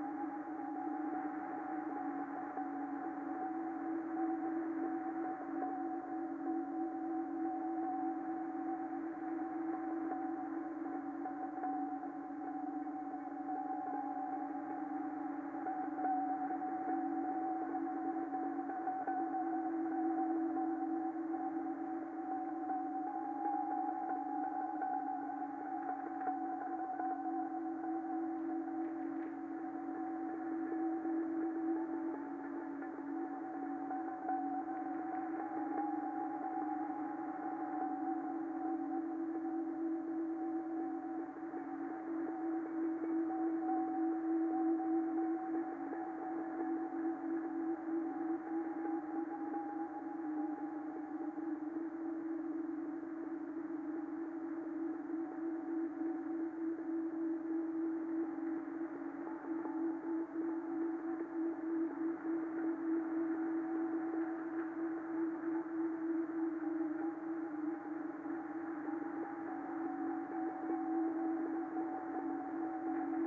session at adhishakti residency - tubular bells on cassette
recording during a rainy week at adhishakti theater arts center - winter 2007-08